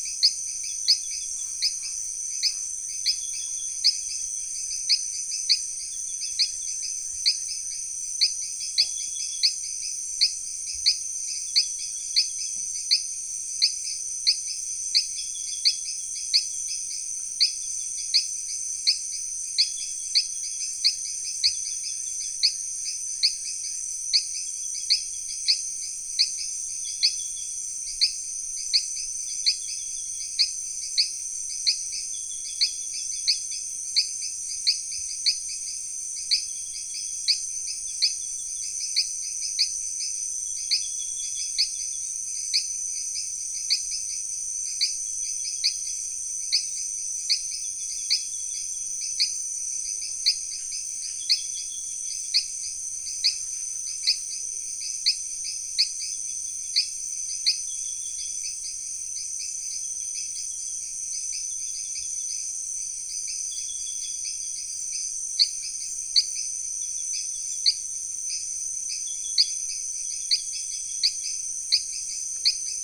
Rio Urubu, Amazonas, Brazil - Left bank forest at nightfall.
17h30 primary / secondary forest mixed.
Low impacted area (only walking trails).
Exact localization to be verified/updated.